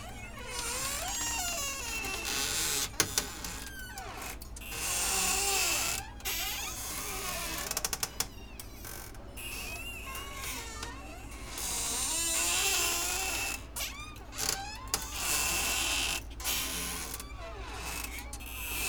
sea room, Novigrad, Croatia - moody tales
built in closet, open windows